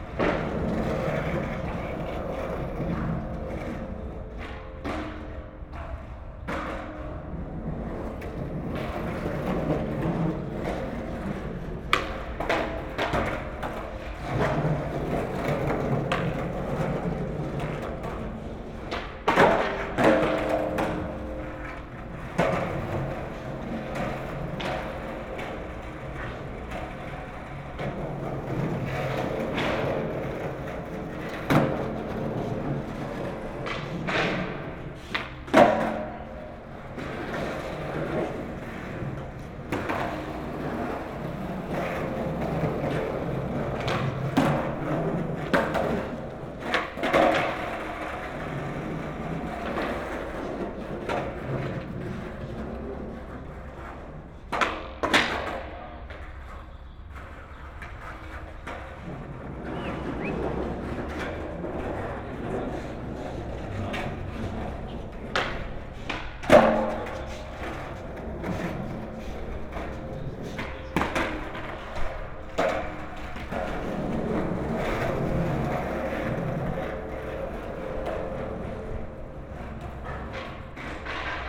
Köln, Deutz - skaters
skaters practising alongside building, creating interesting revererations between the concrete ceiling and ground
(Olympus LS5, Primo EM172 binaural)
Cologne, Germany, May 2012